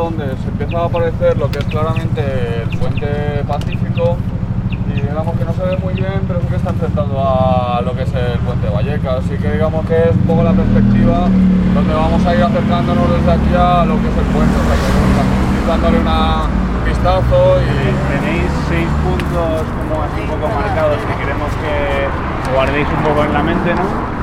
Adelfas, Madrid, Madrid, Spain - Pacífico Puente Abierto - Transecto - 04 - Fundación Catalina Suárez
Pacífico Puente Abierto - Transecto - Fundación Catalina Suárez